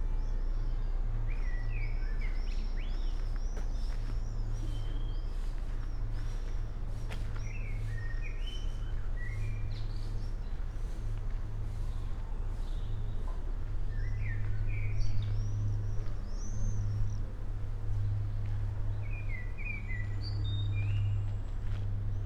cemetery, Esch-sur-Alzette, Luxemburg - walking
short walk over the cemetery of Esch-sur-Alzette
(Sony PCM D50, Primo EM172)
Canton Esch-sur-Alzette, Lëtzebuerg